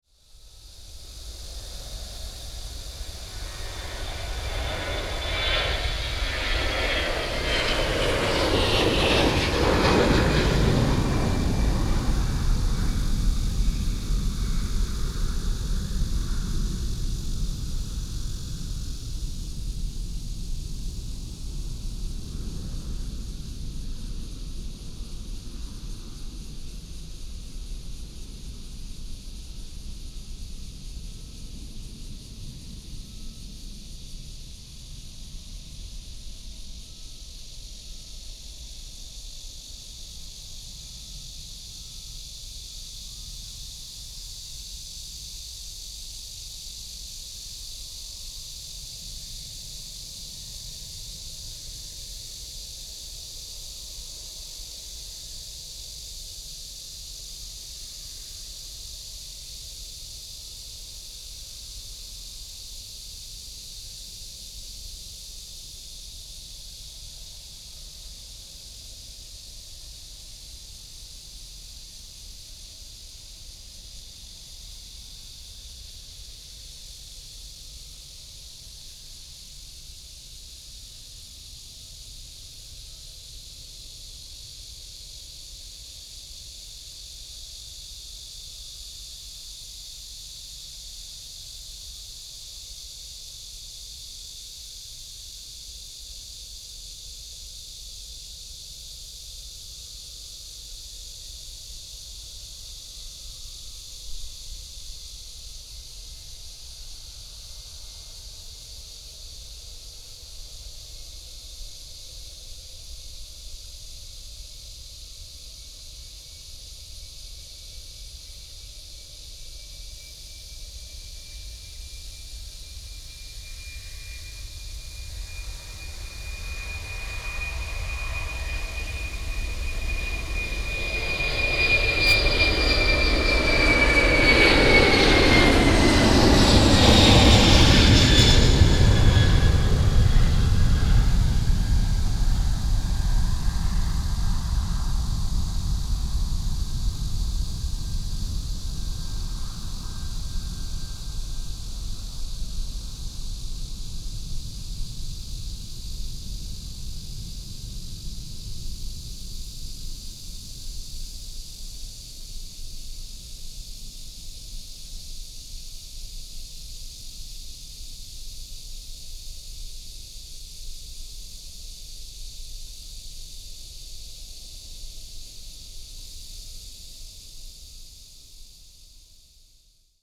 Jianguo 9th Vil., Dayuan Dist. - take off
Cicadas and Birds sound, Near the airport runway, take off